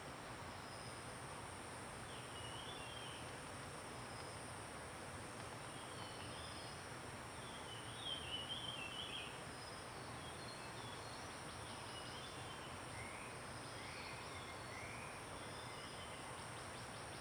種瓜坑, 埔里鎮桃米里 - In the woods
Birds singing, In the woods, Sound streams
Zoom H2n MS+XY
Nantou County, Taiwan, 28 April 2016